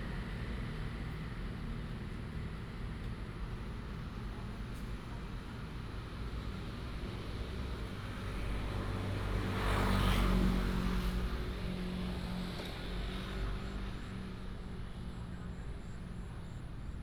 in the park, Birds call, Binaural recordings, Sony PCM D100+ Soundman OKM II